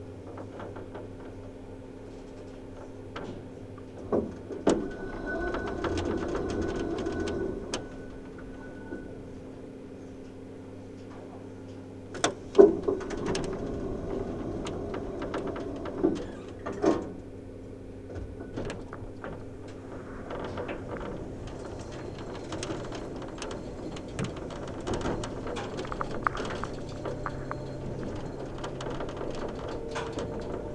Tallinn, Baltijaam hotel elevator - Tallinn, Baltijaam hotel elevator (recorded w/ kessu karu)
hidden sounds, contact mic recording inside a restricted hotel elavator near Tallinns main train station.